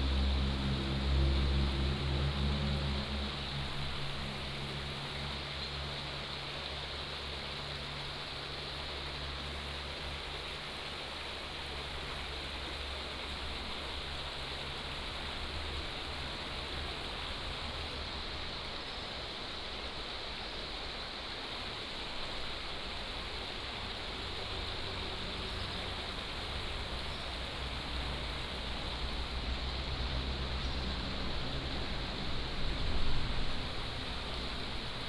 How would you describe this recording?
Below the viaduct, The sound of water streams, Traffic Sound, Bird calls